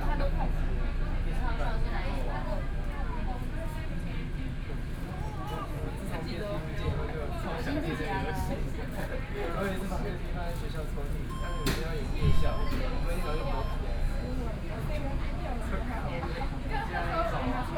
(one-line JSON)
{"title": "Tamsui, Taiwan - Tamsui Line (Taipei Metro)", "date": "2013-11-02 21:26:00", "description": "from Tamsui Station to Zhuwei Station, Binaural recordings, Sony PCM D50 + Soundman OKM II", "latitude": "25.16", "longitude": "121.45", "altitude": "12", "timezone": "Asia/Taipei"}